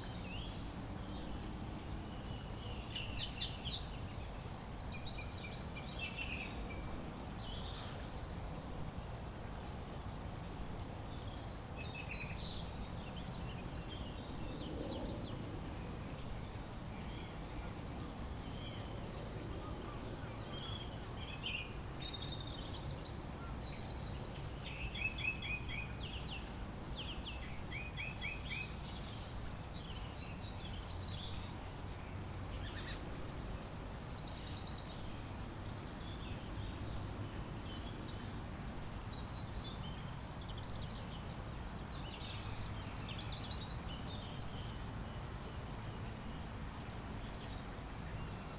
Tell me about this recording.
On the Broad Walk in Regent's Park, London. Birds, runners, planes above, a fountain in the distance.